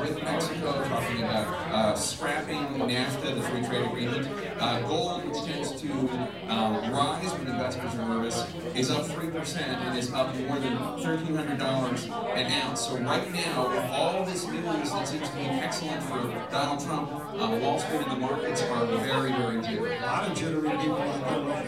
Flatbush - Ditmas Park, Brooklyn, NY, USA - Election Night in a Bar in Brooklyn.
Election Night in a Bar in Brooklyn.
USA presidential election of 2016, held on Tuesday, November 8, 2016.
Zoom H4n
8 November 2016, 22:30